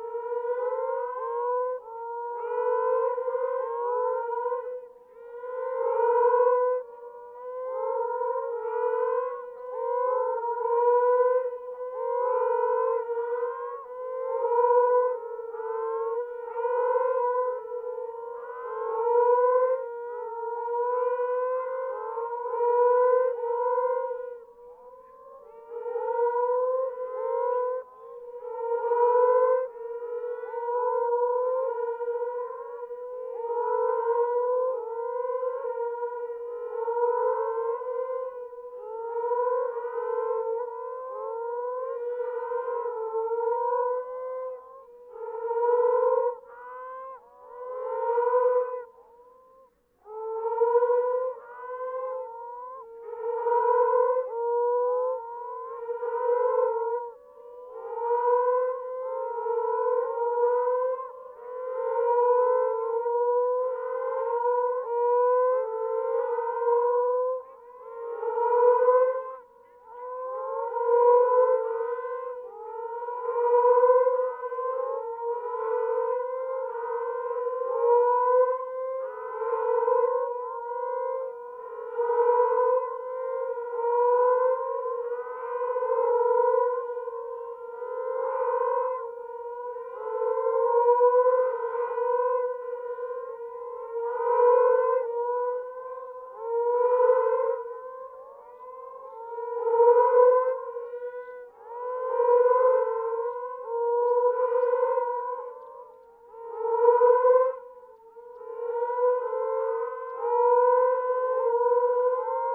Geordie Bay Rd, Rottnest Island WA, Australien - Sounds of Moaning Frogs and Paradise Shelducks at night
Moaning Frogs calling from burrows in the ground. Shelducks calling from nearby lake, on a calm and warm night. Recorded with a Sound Devices 702 field recorder and a modified Crown - SASS setup incorporating two Sennheiser mkh 20 microphones.